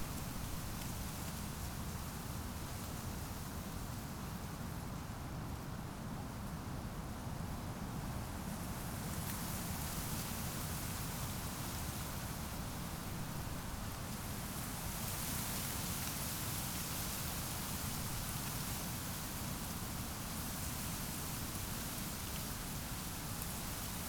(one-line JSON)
{"title": "Buch, Berlin, Moorlinse - wind in reed", "date": "2019-03-09 15:00:00", "description": "Moorlinse Buch, near the S-Bahn station in the northeast of the city, is an extremely valuable refuge for amphibians, reptiles and birds. Marsh harriers and red-breasted grebes breed here, the white-tailed eagle can be seen circling in search of food and the wetland is also popular with migratory birds; on some autumn days hundreds of wild geese gather there.\n(Sony PCM D50)", "latitude": "52.63", "longitude": "13.49", "altitude": "53", "timezone": "Europe/Berlin"}